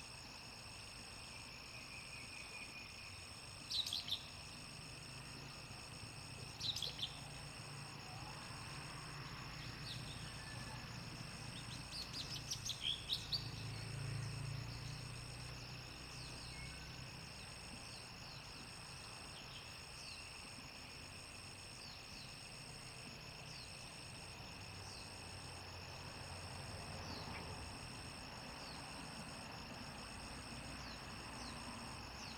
Taomi Ln., Puli Township - Bird calls
Bird calls, Frogs chirping
Zoom H2n MS+XY